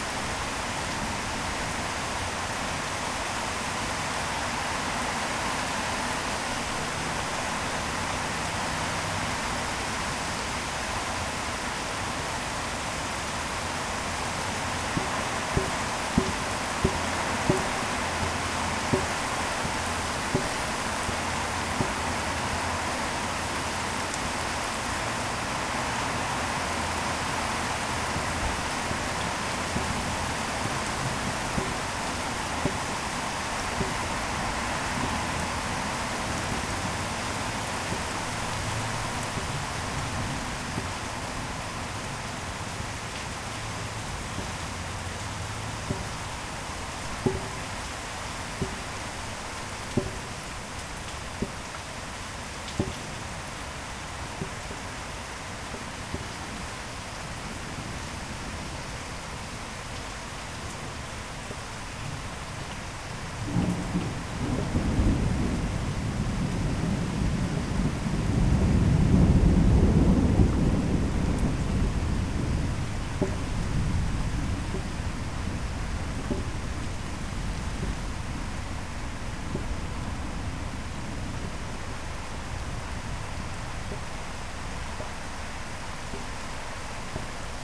Kærengen, Taastrup, Denmark - Summer rain and thunder
Heavy rain and distant thunder. Dropping water makes some drum sounds
Pluie d’été et tonerre lointain. Des gouttes de pluis genèrent un bruit de percussion
3 August 2017, ~17:00